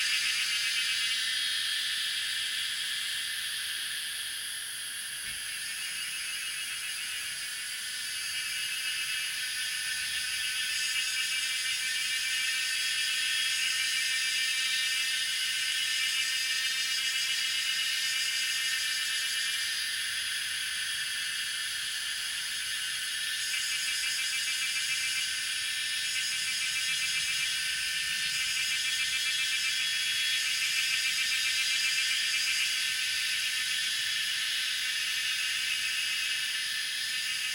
種瓜坑, 成功里, Taiwan - Cicada sounds
Birds singing and Cicada sounds, Faced woods
Zoom H2n MS+XY